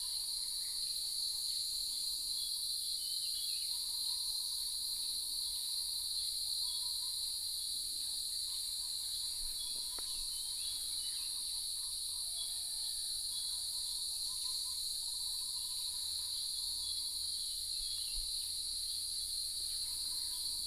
種瓜路.草楠, 桃米里 - early morning
in the wetlands, Bird sounds, Insects sounds, Cicada sounds